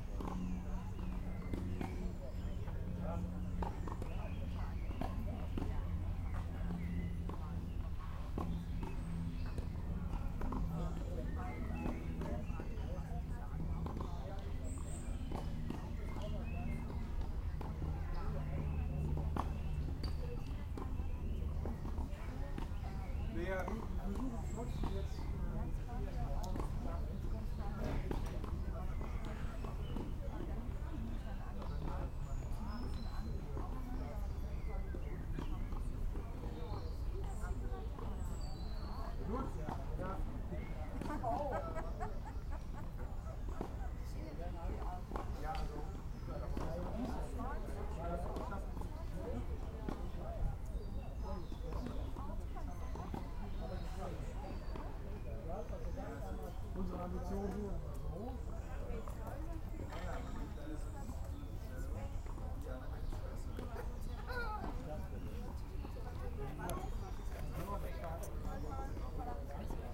lawn-tennis - Köln, lawn-tennis
several courts at "Tennis Club Lese Grün-Weiß" Cologne, evening, may 29, 2008. - project: "hasenbrot - a private sound diary"